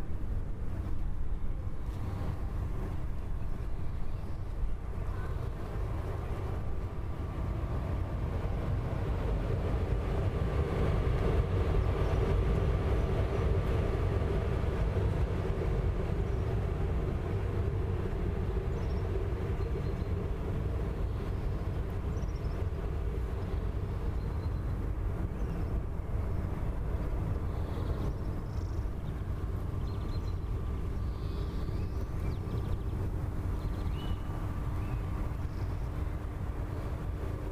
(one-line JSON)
{"title": "ESAD.CR - PIAPLACE1", "date": "2014-02-26 17:16:00", "description": "Recorded in exterior with ZoomH4, in stereo (x/y) close to air/ventilation thing. Use of headphones advised.", "latitude": "39.39", "longitude": "-9.14", "timezone": "Europe/Lisbon"}